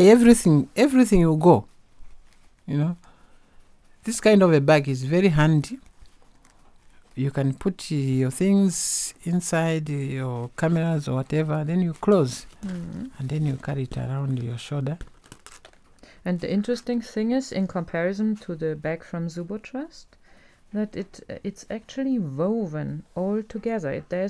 Harmony farm, Choma, Zambia - Talking with Esnart about Ilala crafts
I had just come to Zambia for a couple of days, and at the morning of the interview recording, was about to cross the border back to Binga Zimbabwe. I had brought along as a little gift for Esnart, one of the ilala bags by Zubo women; and this is one of the subjects discussed here in conversation. We are comparing the bag produced by Zubo with some other ilala bags, we happen to have at hand. The interview is thus in parts particularly addressed to the Zubo women, as Esnart’s feedback, knowledge sharing and solidarity message to the women in the Zambezi valley.